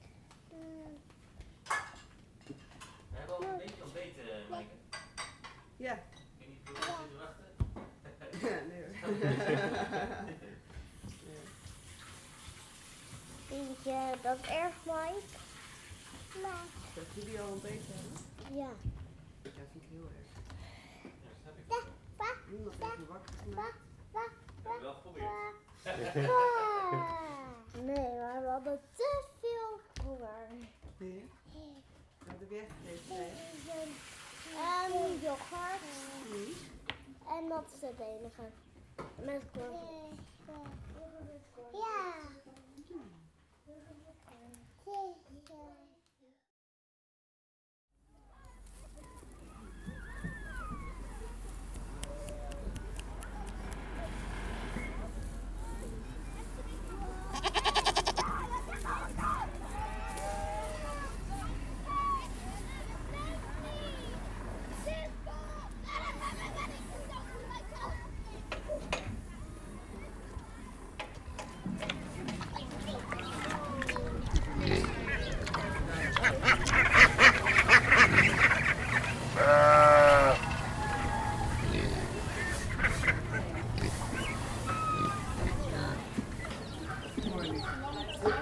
Soundscape of my visit to the island Texel made for World Listening Day, July 18th 2020
As many people I spend this summer holiday within the borders of my own country, rediscovering the Dutch landscape.